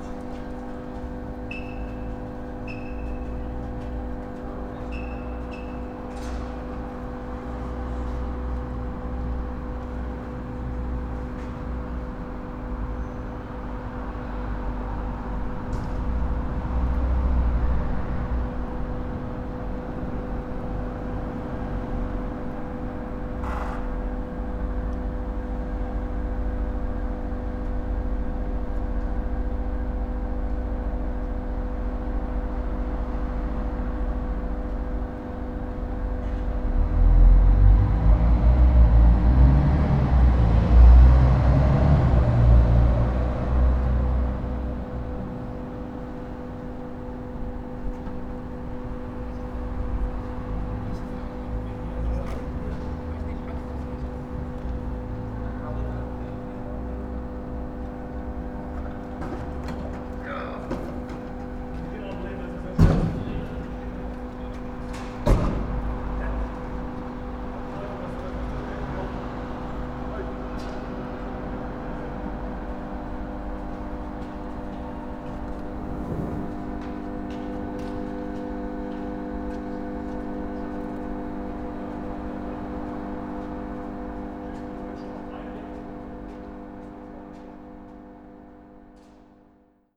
musical drones at the transformer station, Haus der Technik, university library Weimar.
(Sony PCM D50)
Weimar, Germany, 27 January, 08:20